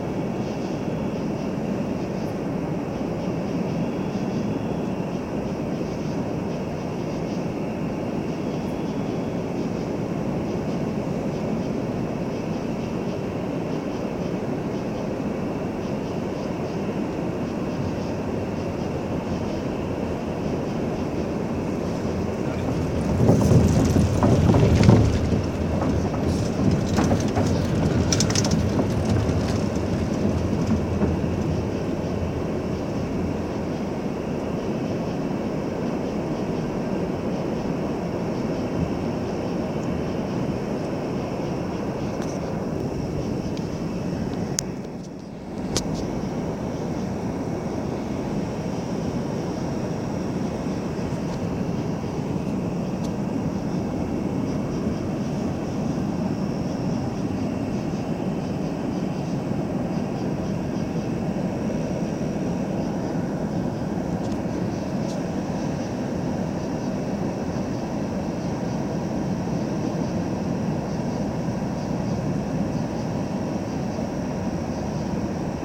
Ames, IA, USA - Sunday Evening on the Bridge
Sunday evening on the bridge by Brookside Park
28 September 2015